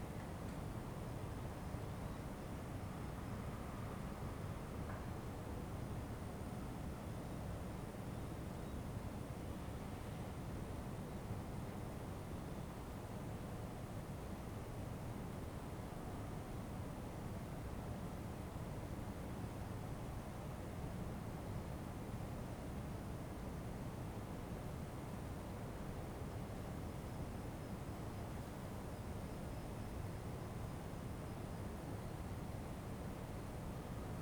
Ascolto il tuo cuore, città. I listen to your heart, city. Several chapters **SCROLL DOWN FOR ALL RECORDINGS** - High summer stille round midnight in the time of COVID19 Soundscape
"High summer stille round midnight in the time of COVID19" Soundscape
Chapter CLXXIX of Ascolto il tuo cuore, città. I listen to your heart, city
Sunday, August 24th 2021; more then one year and four months after emergency disposition (March 10th 2020) due to the epidemic of COVID19.
Start at 00:11 a.m. end at 00:58 p.m. duration of recording 47'00''.
Piemonte, Italia, 24 July 2021, ~00:00